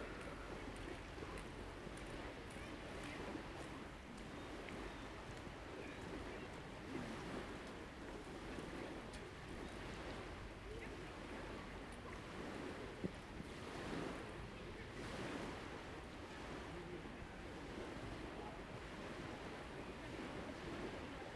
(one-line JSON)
{"title": "Lake Ekoln, Morga Hage, Uppsala, Sweden - beach at lake Ekoln on a sunny winter day", "date": "2020-01-19 12:31:00", "description": "splashing waves, wind, people walking along the beach, talking, laughing, jogging.\nrecorded with H2n, 2CH, handheld", "latitude": "59.76", "longitude": "17.64", "altitude": "17", "timezone": "Europe/Stockholm"}